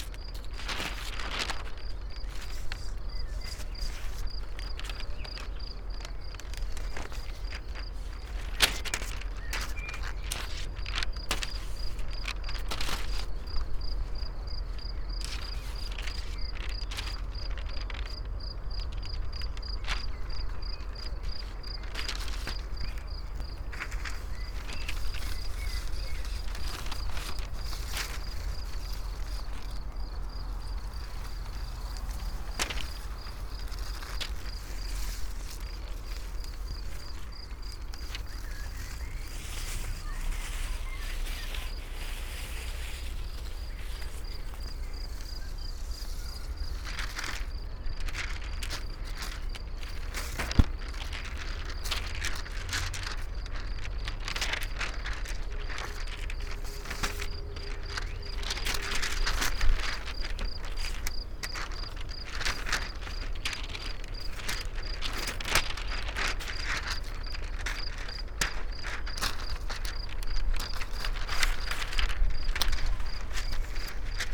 path of seasons, vineyard, piramida - wind unfolds scroll books
unfolded book, attached to the vineyard wires, wind playing them, another scroll lying in high grass